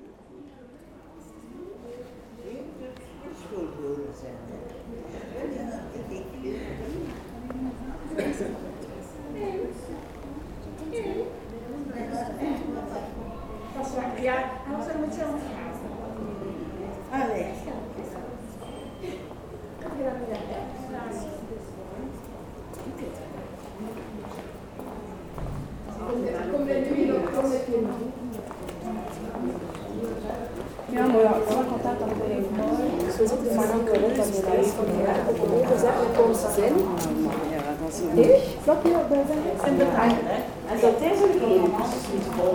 Leuven, Belgique - Old passers in the street
Old persons walking in a cobblestones street, distant sound of a quiet park.
Leuven, Belgium